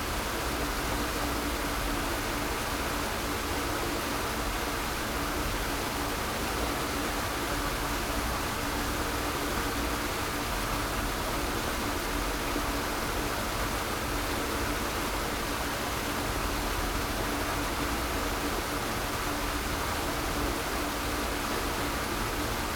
water level regulation between nearby Karpfenteich pond and Lietzengraben ditch, sound of water flowing through the pipe
(Sony PCM D50)
Deutschland, 23 January, ~14:00